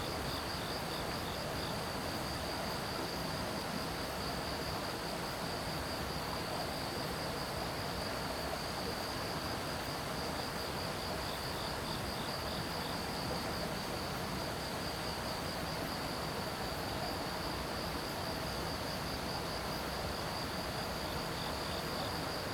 Jimuling St., Gongliao Dist., 新北市 - Stream and Insects sounds
Stream sound, Insects sounds
Zoom H2n MS+XY